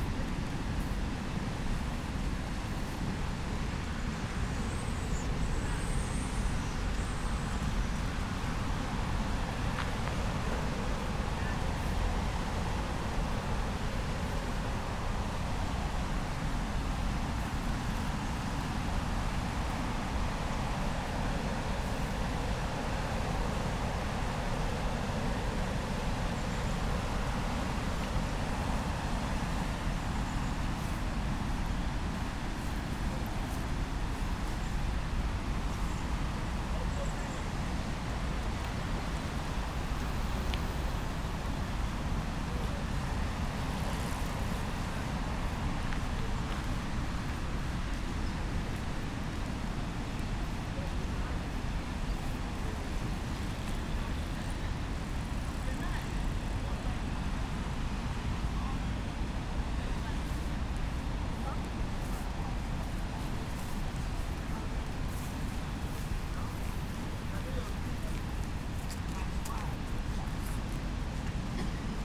Eisackufer, Trienter Straße nach, Ponte Loreto, Bolzano BZ - 25.10.19 - Giardino delle religioni
Voci nel giardino delle Religioni, vicino al fiume Isarco
Registrato da Kosara Keskinova